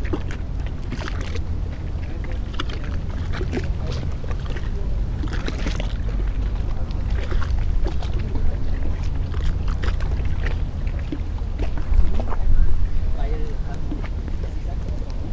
Märkisches Ufer, Mitte, Berlin, Germany - along the river Spree
lapping waves, clogs, gulls, crow, S-bahn, walking ...
Sonopoetic paths Berlin